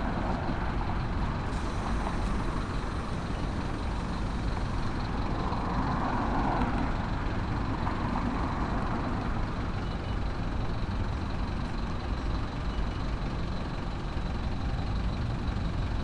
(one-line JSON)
{"date": "2022-06-09 17:33:00", "description": "Traffic on Avenida Las Torres after two years of recording during COVID-19 in phase 2 in León, Guanajuato. Mexico. In front of the Plaza Mayor shopping center.\nI made this recording on june 9th, 2022, at 5:33 p.m.\nI used a Tascam DR-05X with its built-in microphones and a Tascam WS-11 windshield.\nOriginal Recording:\nType: Stereo\nEsta grabación la hice el 9 de junio 2022 a las 17:33 horas.", "latitude": "21.16", "longitude": "-101.69", "altitude": "1824", "timezone": "America/Mexico_City"}